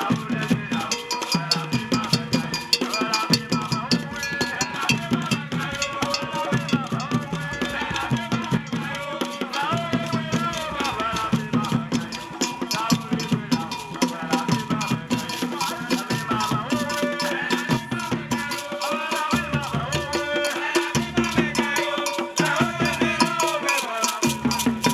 Stadium St, Ho, Ghana - The big annual Framers Festival 2004 - feat. Kekele Dance Group
the grand finale of the farmers festival with drinks and snacks for everyone and a performance of the wonderfull Kekele Dance Group